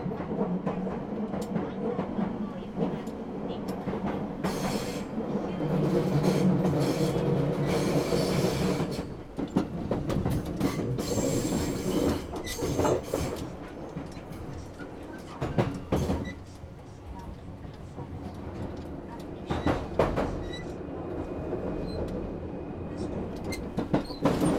{"title": "south of Osaka, on a JR train - ride towards the Kansai airport", "date": "2013-03-31 19:52:00", "description": "a metal plate/footbridge moving around in a passage of a moving JR Kansai Airport Rapid Service. various announcements during a stop on one of the stations.", "latitude": "34.62", "longitude": "135.53", "altitude": "8", "timezone": "Asia/Tokyo"}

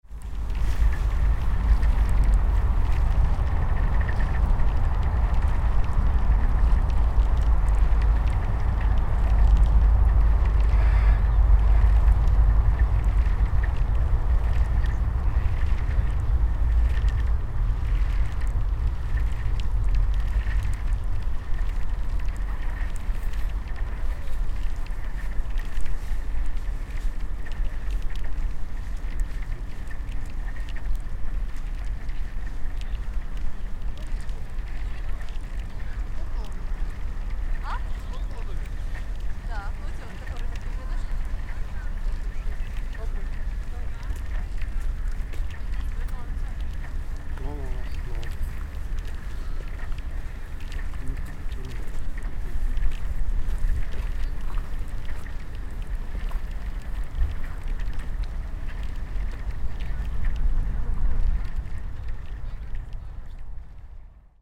{"title": "Strandvägen, Stockholm. Ice sheets rubbing.", "date": "2011-02-13 10:57:00", "description": "Ice sheets rubbing together with gentle movement of the water. Vehicles and passers-by in background.", "latitude": "59.33", "longitude": "18.09", "altitude": "17", "timezone": "Europe/Stockholm"}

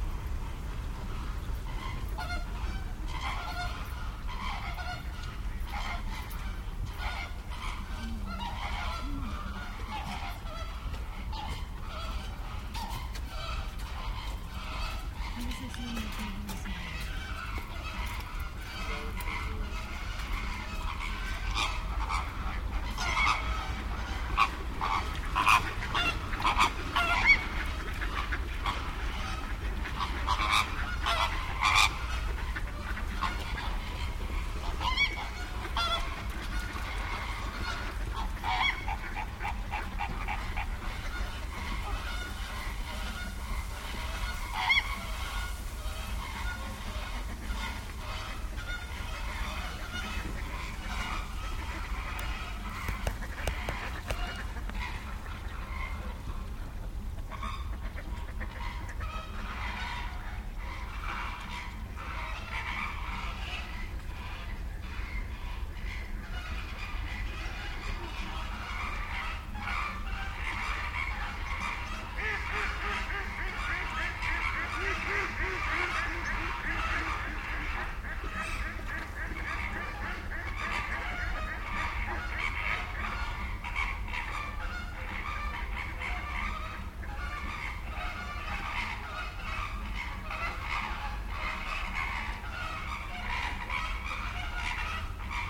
{"title": "Zoo, garden, flamingos", "date": "2008-12-14 15:31:00", "description": "Even in the winter staying flamingos outside by the pool and singig together with ducks.", "latitude": "50.12", "longitude": "14.41", "altitude": "185", "timezone": "Europe/Prague"}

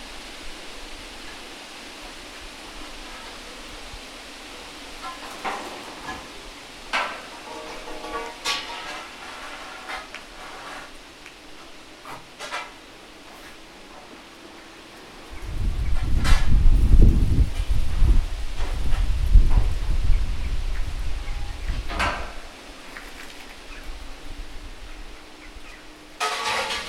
{"title": "Gorzów Wlkp Zamoście ul.Wawrzyniaka, Gorzów Wielkopolski, Polska - Railway station and scrap metal purchase.", "date": "2019-08-13 13:05:00", "description": "Old railway station on the south side of the Warta river. There is the scrap metal purchase next to it. The recording comes from a sound walk around the Zawarcie district. Sound captured with ZOOM H1.", "latitude": "52.72", "longitude": "15.24", "altitude": "20", "timezone": "Europe/Warsaw"}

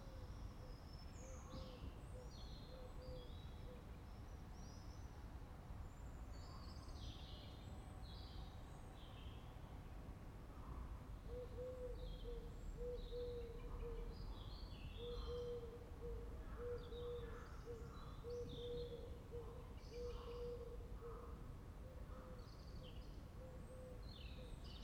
{"title": "Rue Alcide dOrbigny, La Rochelle, France - P@ysage Sonore - Landscape - La Rochelle COVID 9 am jogging with bell tower", "date": "2020-04-27 08:57:00", "description": "at 1'53 : 9 am jogging with bell tower, and frog and avifauna Jardin des Plantes\n4 x DPA 4022 dans 2 x CINELA COSI & rycote ORTF . Mix 2000 AETA . edirol R4pro", "latitude": "46.16", "longitude": "-1.15", "altitude": "13", "timezone": "Europe/Paris"}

